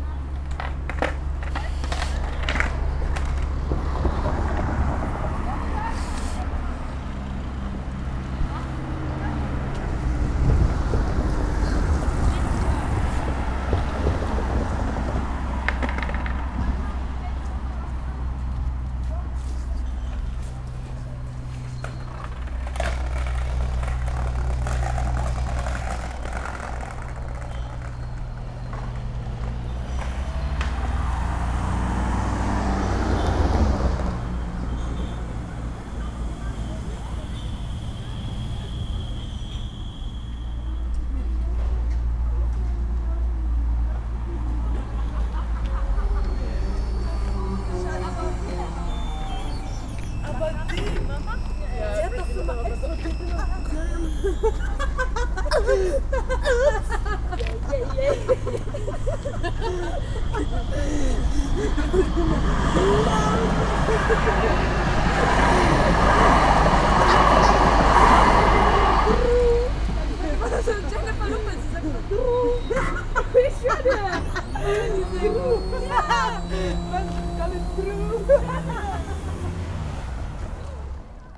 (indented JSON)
{
  "title": "refrath, vuerfels, bahnuebergang - refrath, vürfles, skater, tram and closing of the gates",
  "description": "skater on the street, a tram arrives, the dates close, the tram passes by\nsoundmap nrw - social ambiences and topographic field recordings",
  "latitude": "50.95",
  "longitude": "7.11",
  "altitude": "69",
  "timezone": "Europe/Berlin"
}